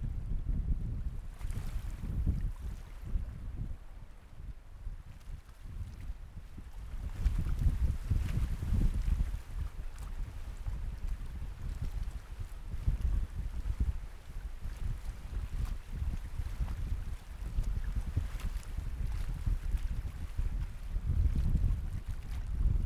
Changhua County, Taiwan - at sea
Cold strong wind, The sound of the wind, Women working at sea, Oyster
Zoom H6 MS